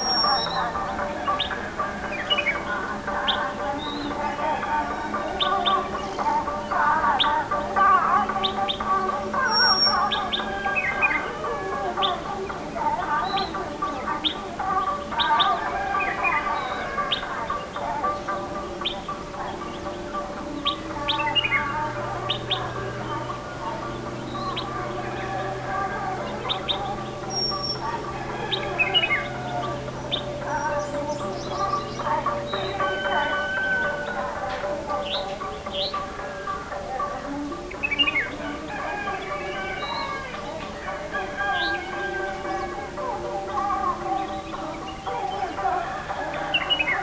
Nullatanni, Munnar, Kerala, India - dawn Munnar - over the valley 3
dawn Munnar - over the valley part 3. All the Dawn Munnar parts are recorded in one piece, but to cut them in peaces makes it easier to handle.
Munnar is situated in a lustfull green valley surrounded by tes bushes. Munnar istself is a rather small and friendly town. A pleasant stay is perhaps not garanteed, but most likely.